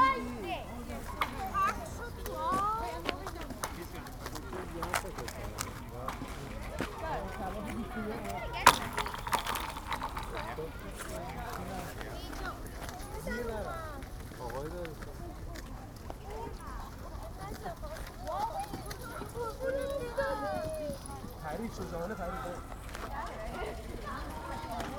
Viaduct Pond, Hampstead, London - Frozen Pond
Hampstead Heath frozen pond, kids playing with the ice, breaking ice, ice sounds, pulling a dog from the water